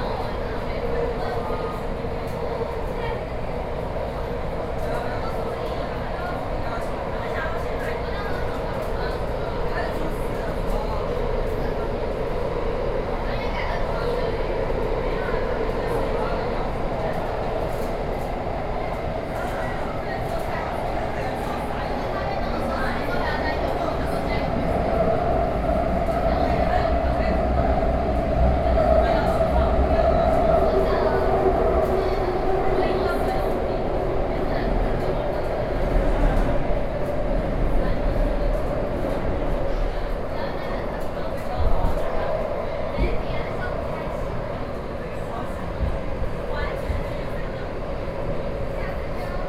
Sanchong, New Taipei city - in the MRT train